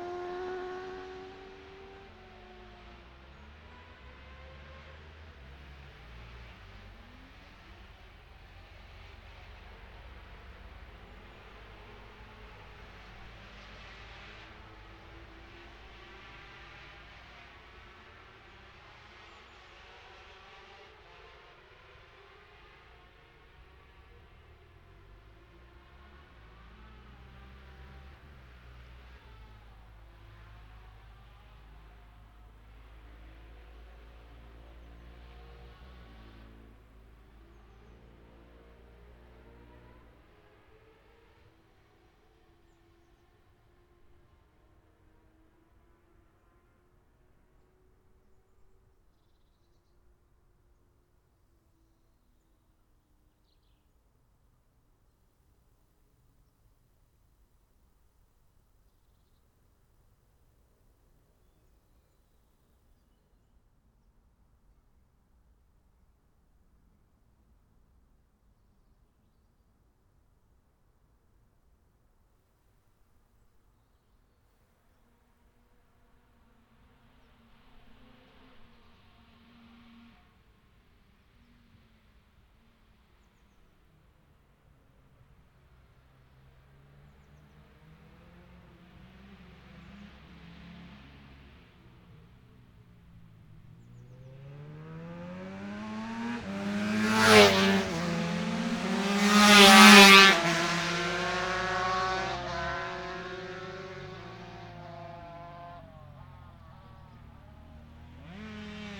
Scarborough, UK - motorcycle road racing 2012 ...
125-400cc practice two stroke/four stroke machines ... Ian Watson Spring Cup ... Olivers Mount ... Scarborough ... binaural dummy head recording ... grey breezy day ...